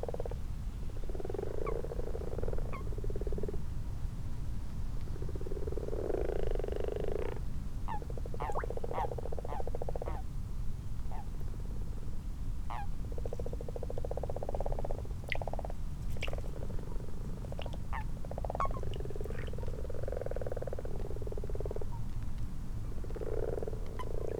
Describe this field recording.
common frogs and common toads ... xlr mics to sass on tripod to zoom h5 ... time edited unattended extended recording ...